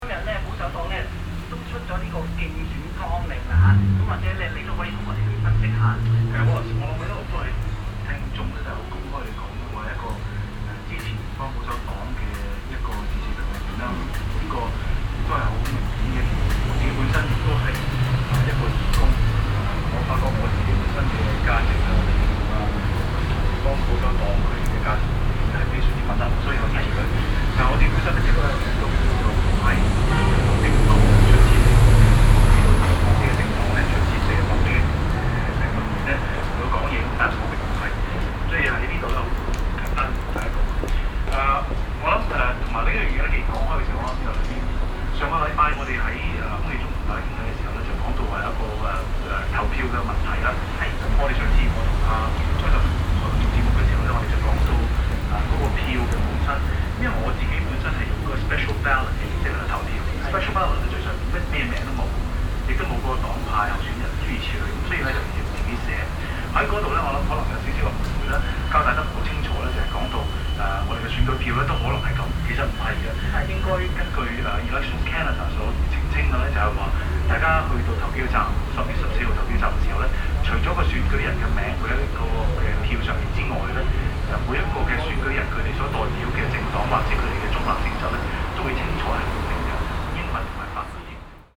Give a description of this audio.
sounding advertisment radio at a chinese supermarket in china town, soundmap international, social ambiences/ listen to the people - in & outdoor nearfield recordings